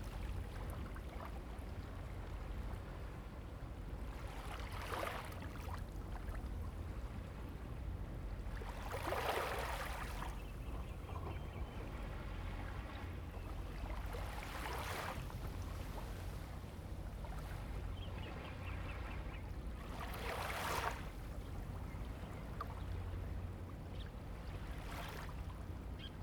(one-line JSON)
{"title": "南寮港, Mituo Dist., Kaohsiung City - Small beach", "date": "2018-05-07 14:43:00", "description": "Small beach beside the fishing port, Sound of the waves, Construction sound\nZoom H2n MS+XY", "latitude": "22.76", "longitude": "120.23", "altitude": "2", "timezone": "Asia/Taipei"}